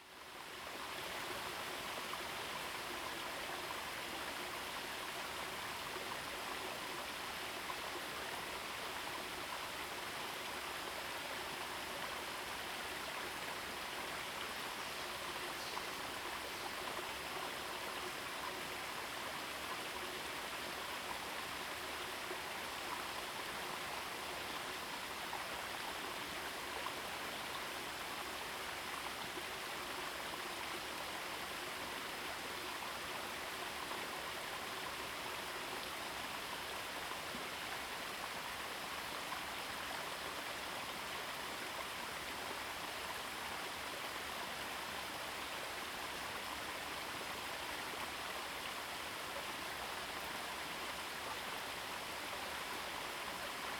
猴龍溪, 五城村, Yuchi Township - Stream sound
Stream sound, Bird sounds
Zoom H2n MS+XY
Nantou County, Yuchi Township, 華龍巷41-2號, May 2016